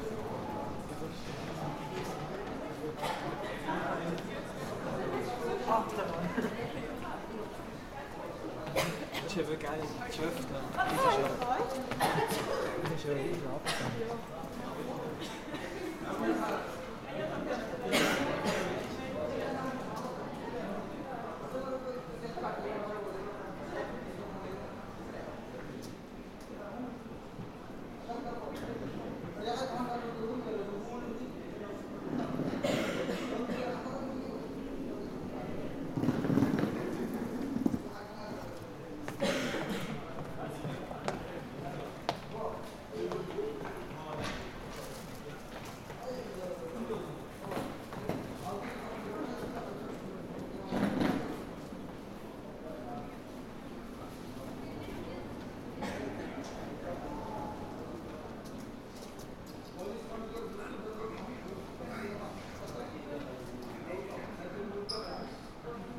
Train station, Aarau, Schweiz - Trainstationminusone
At the renovated minus one level of the Aarau train station: in this very clean sourrounding several noises are audible.
Aarau, Switzerland, February 2016